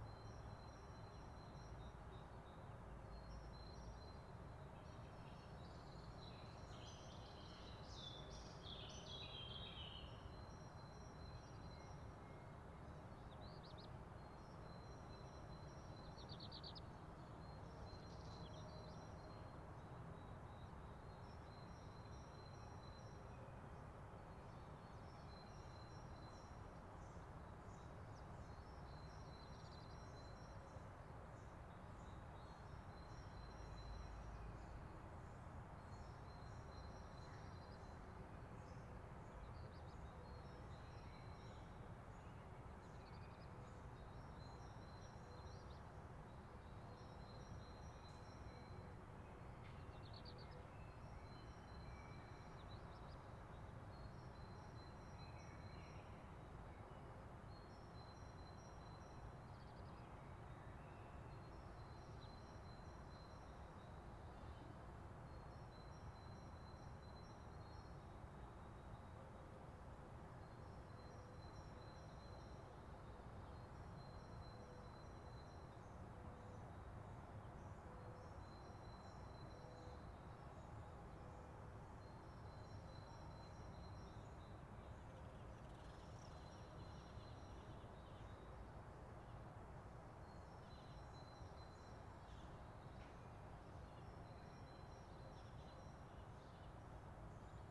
FenetreRue 24 Rue Edmond Nocard, Maisons-Alfort, France - Quiet Morning in Maisons-Alfort during covid-19
Quiet morning recording in Paris Suburb urban rumor birds
It's 6 o clock. We can here some Feral parrots, it's wild birds, witch are spreading into Paris Suburb for a few years
During Covid 19 containment
Recorder: Zoom H4Npro